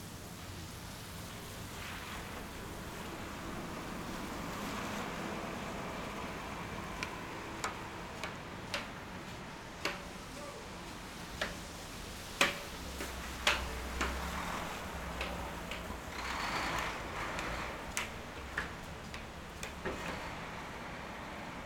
Lexington Ave, New York, NY, USA - Workers shovel snow off the sidewalk
Workers shovel snow off the sidewalk at Lexington Avenue.
United States, 1 February 2022